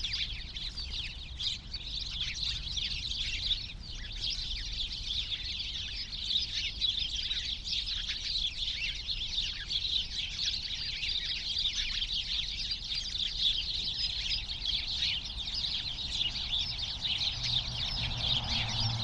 Berlin, Schinkestr., Spielplatz - playground ambience /w sparrows
many sparrows (Hausspatzen) in a bush at playground Schinkestrasse
(Sony PCM D50, AOM5024)